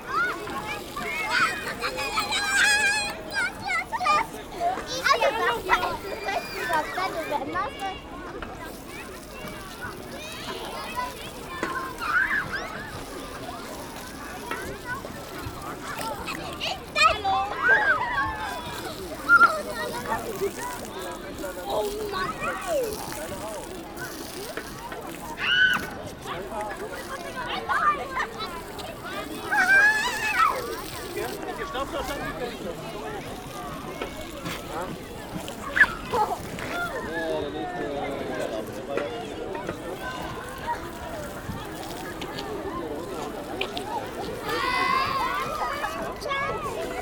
Hamburg, Deutschland - Children playing with water
Planten un Blomen, Großer spielplatz. Into the huge botanic garden of Hamburg, a colossal amount of children playing in the park.
19 April, 15:30